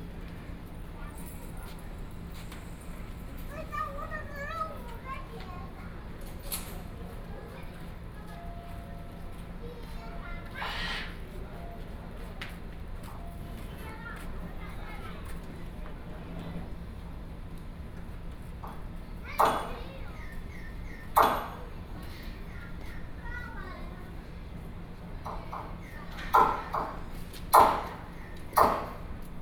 Zhongli Station, Taoyuan County - platform
On the platform waiting for the train, Zoom H4n+ Soundman OKM II
Zhongli City, Taoyuan County, Taiwan